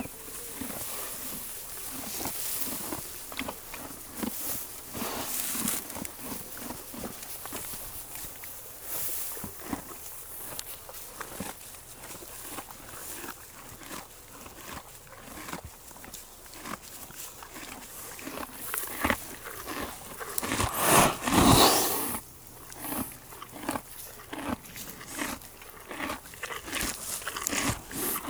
{"title": "Vatteville-la-Rue, France - Horses", "date": "2016-07-23 06:57:00", "description": "This night, we slept with the horses, on a very thick carpet of hay. On the morning, horses are near us. Your bed is very very very enviable !! So we gave the hay to the happy horses, they made a very big breakfast ! At 7 on the morning, the bell of Vatteville-La-Rue rings.", "latitude": "49.50", "longitude": "0.67", "altitude": "3", "timezone": "Europe/Paris"}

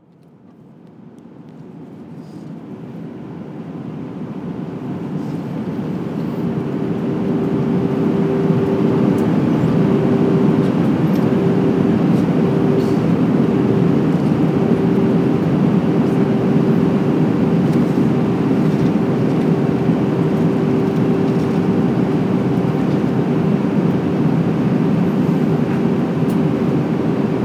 {"title": "Chicago O'Hare International Airport (ORD), Chicago, IL, USA - Landing on Feb 16 2013", "date": "2013-02-16 20:43:00", "description": "Smooth Landing at Chicago O'Hare airport on Feb 16 2013 on flight from New York", "latitude": "42.00", "longitude": "-87.90", "altitude": "199", "timezone": "America/Chicago"}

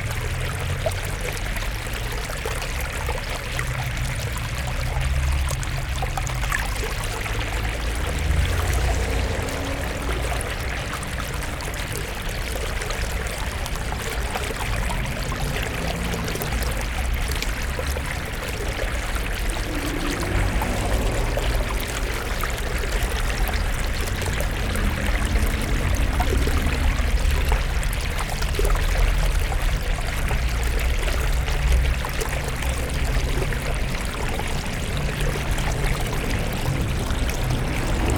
{"title": "Montreal: Queen Mary & Victoria - Queen Mary & Victoria", "date": "2008-10-20 14:06:00", "description": "equipment used: M-Audio MicroTrack II\nexploring stream on chemin queen mary", "latitude": "45.49", "longitude": "-73.62", "altitude": "86", "timezone": "America/Montreal"}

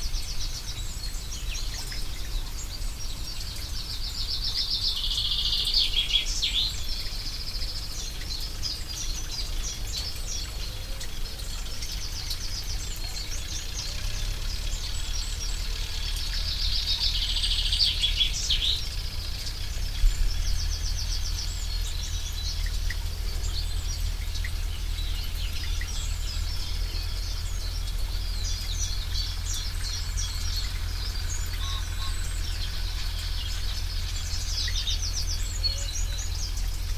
{"title": "corner Barr Lane, Chickerell, by stream", "date": "2011-04-10 14:48:00", "description": "spring, stream, birds, Barr Lane, Chickerell", "latitude": "50.63", "longitude": "-2.51", "altitude": "18", "timezone": "Europe/London"}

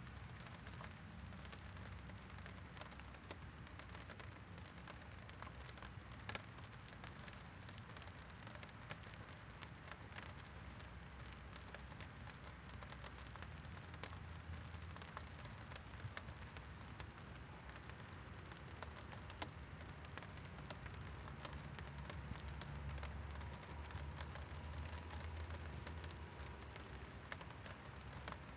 {"title": "McKinley St NW, Ramsey, MN, USA - Ramesy - Staples Subdivision", "date": "2022-03-22 15:11:00", "description": "Recording made next to the Staples Subdivision rail road tracks in Ramsey, Minnesota. One train goes by during the recording. It was a rainy March day and the recorder was being sheltered by a cardboard box so the sound of the rain on the box can be heard aas well as drops falling on the windscreen. This location is adjacent to a garbage truck depot as well as a gravel pit so noises from that can also be hear. Nearby Highway 10 traffic can be heard as well.\nThis was recorded with a Zoom H5", "latitude": "45.22", "longitude": "-93.44", "altitude": "259", "timezone": "America/Chicago"}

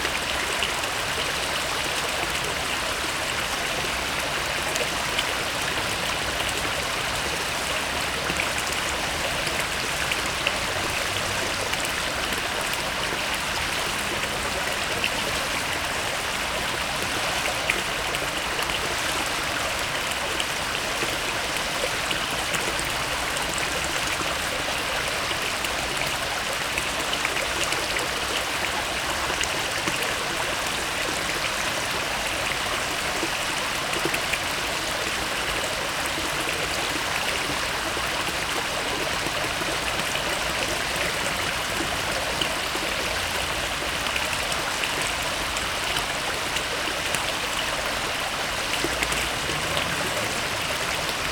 {"title": "Orléans, fontaine Place d'Arc (haut droite)", "date": "2011-05-06 18:18:00", "description": "Fontaine en escalier à Place d'Arc, Orléans (45-France)\n(haut droite)", "latitude": "47.91", "longitude": "1.91", "altitude": "120", "timezone": "Europe/Paris"}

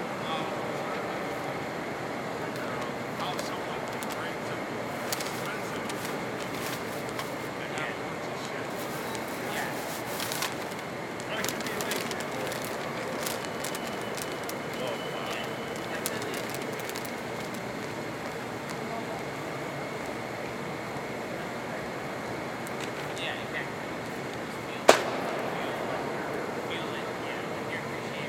{"title": "E 42nd St, New York, NY, USA - Grand Central Terminal at night", "date": "2022-04-05 23:30:00", "description": "Grand Central Terminal, Main Concourse, at night.", "latitude": "40.75", "longitude": "-73.98", "altitude": "17", "timezone": "America/New_York"}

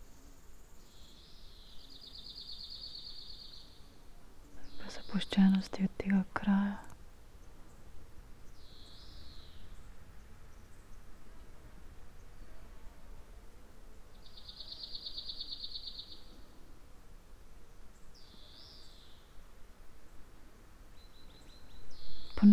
{"title": "quarry, Marušići, Croatia - void voices - stony chambers of exploitation - poems", "date": "2013-07-13 18:42:00", "latitude": "45.42", "longitude": "13.74", "altitude": "269", "timezone": "Europe/Zagreb"}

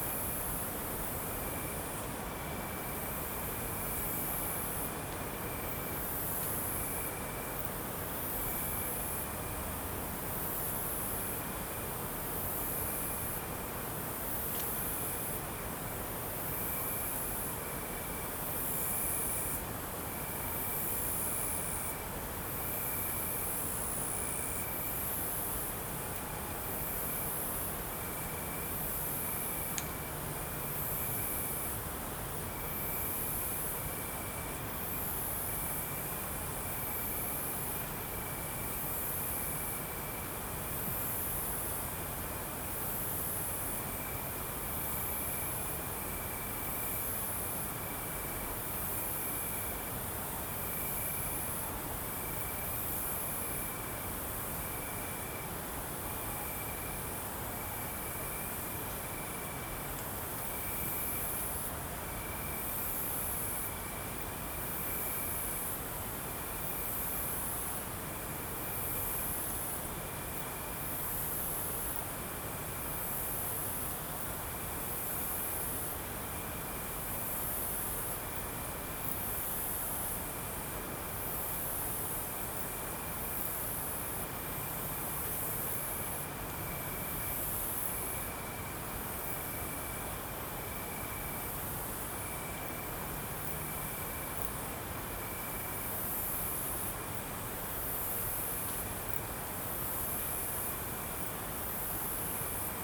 {"title": "Saint-Pons-de-Thomières, France - Insects Around a Gîte", "date": "2018-08-28 03:25:00", "description": "Recorded on a Sound Devices MixPre-3 via an Audio-Technica BP4025", "latitude": "43.51", "longitude": "2.75", "altitude": "517", "timezone": "Europe/Paris"}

